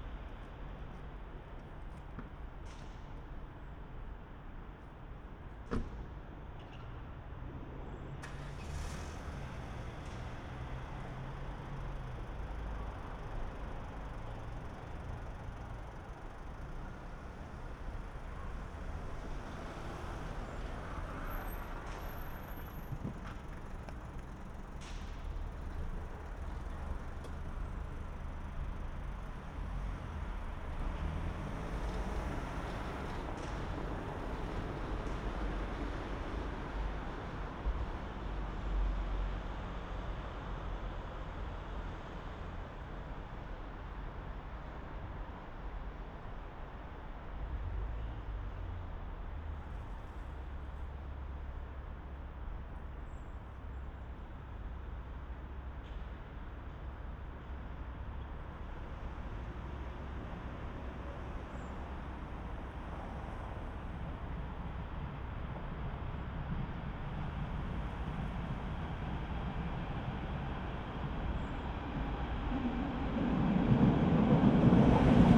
{"title": "Beermannstraße, Berlin, Deutschland - dead end street ambience, A100 Autobahn", "date": "2018-12-28 15:50:00", "description": "Sonic exploration of areas affected by the planned federal motorway A100, Berlin, place revisited.\n(SD702, AT BP4025)", "latitude": "52.49", "longitude": "13.46", "altitude": "36", "timezone": "Europe/Berlin"}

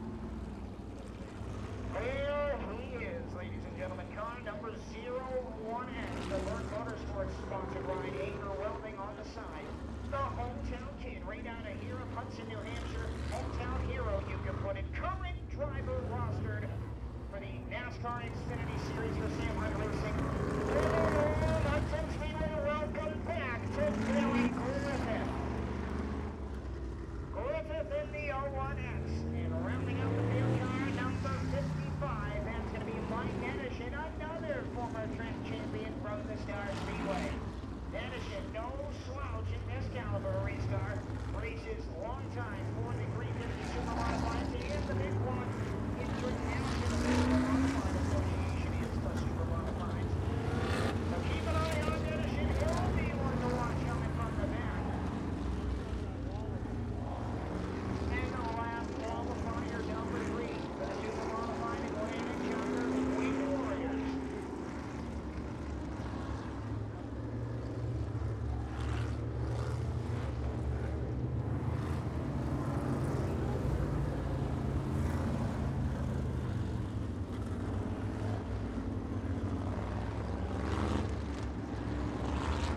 {"title": "Hudson Speedway - Supermodified Heat Races", "date": "2022-05-22 14:12:00", "description": "Heat Races for the SMAC 350 Supermodifieds", "latitude": "42.81", "longitude": "-71.41", "altitude": "67", "timezone": "America/New_York"}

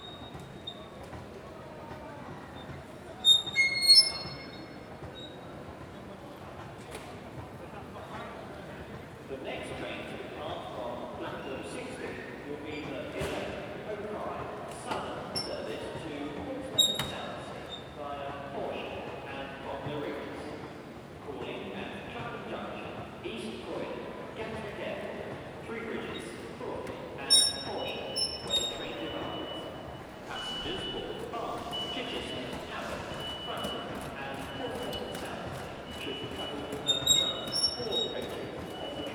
{"title": "Buckingham Palace Rd, London, Vereinigtes Königreich - London - Victoria Station - Escalator", "date": "2022-03-17 10:23:00", "description": "Inside London Victoria Station - steps, people and the sound of an escalator\nsoundmap international:\nsocial ambiences, topographic field recordings", "latitude": "51.50", "longitude": "-0.14", "altitude": "18", "timezone": "Europe/London"}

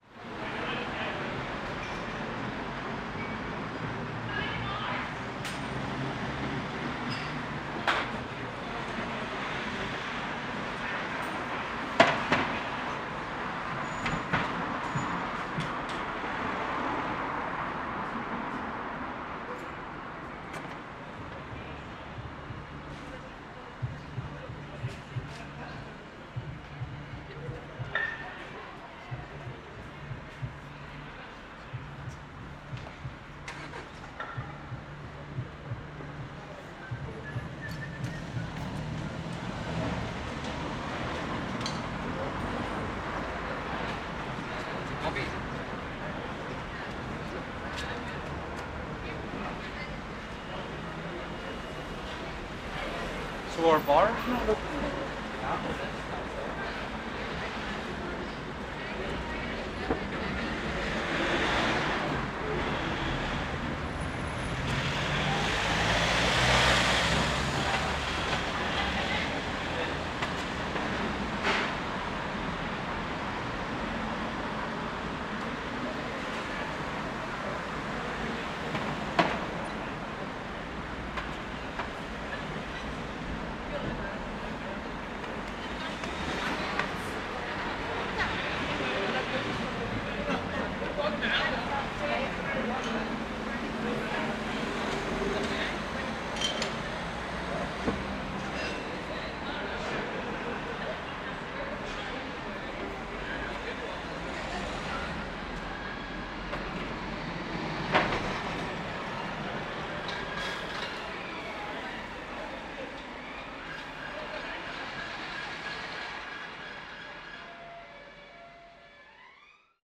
{"title": "Dublin Rd, Belfast, UK - Dublin Road", "date": "2020-10-16 18:01:00", "description": "Recording in front of two bars which are closed/closing (Filthy’s – closed and The Points – closing), at The Points they were still people finishing their drinks on the outdoor terrace, staff picking up glassware, groups of people leaving the pub, chatter, passerby, vehicle traffic, at Filthy’s they were boarding up their doors and windows for the closure. Beginning of Lockdown 2 in Belfast.", "latitude": "54.59", "longitude": "-5.93", "altitude": "11", "timezone": "Europe/London"}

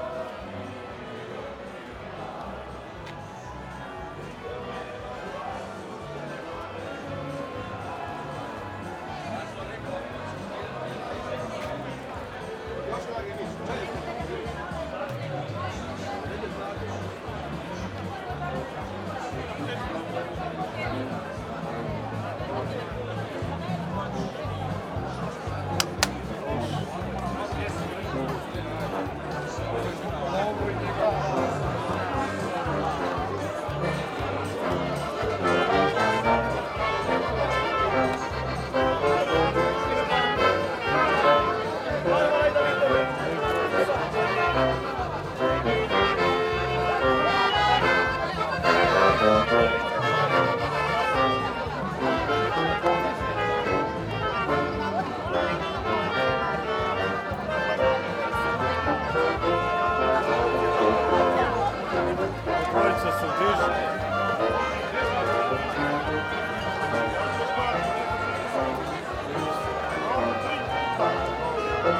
{"title": "Kvarner, Kastav, Bela Nedeja, Traditional Fair", "description": "Traditional three-day fair honouring new wine mentioned as early as in the Codex of Kastav dated from 1400. Provision of versatile fair merchandise is accompanied by cultural and entertainment programme", "latitude": "45.37", "longitude": "14.35", "altitude": "354", "timezone": "Europe/Berlin"}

